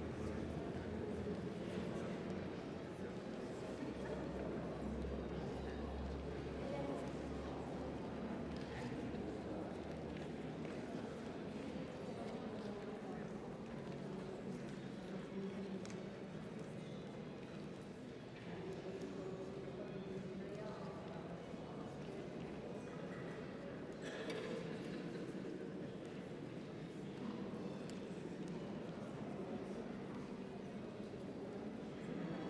Berlin, Französischer Dom, Deutschland - Raumklang
Vor einem Konzert